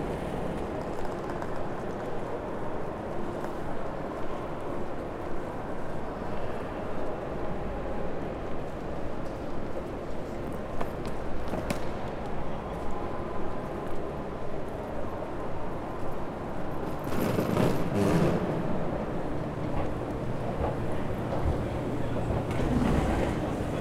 Frankfurt Hauptbahnhof 1 - 200515 Bahnhofshalle nach Öffnung
The lockdown is over since two weeks, the station is still no tas busy as it was, but many more people are crossing the great hall before they enter the platforms. People are buying flowers and talking on the phone without masks...